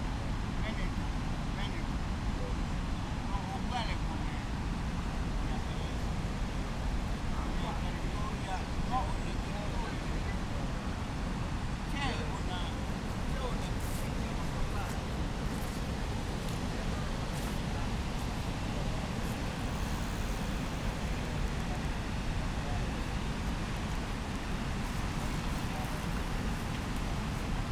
Eisackufer, Trienter Straße nach, Ponte Loreto, Bolzano BZ - 25.10.19 - Giardino delle religioni
Voci nel giardino delle Religioni, vicino al fiume Isarco
Registrato da Kosara Keskinova
25 October 2019, 16:40